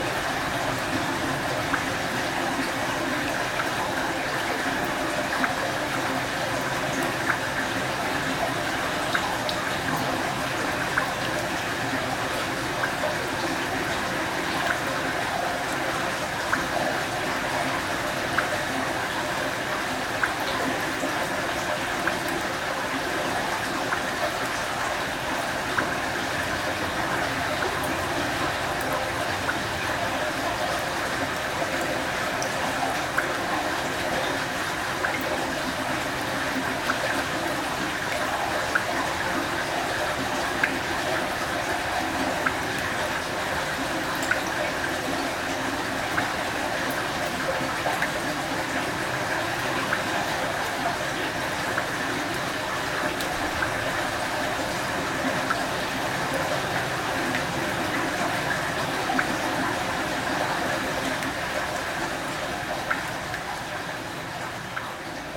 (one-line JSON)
{"title": "Mont-Saint-Guibert, Belgique - Sewer", "date": "2016-02-14 20:53:00", "description": "A sewer sound during rain time. I was using the two microphones on the manhole. Night. Rain. Strange posture... in fact very strange posture I could say ! The cops went, had a look on me and... they didn't stop :-D", "latitude": "50.63", "longitude": "4.61", "altitude": "82", "timezone": "Europe/Brussels"}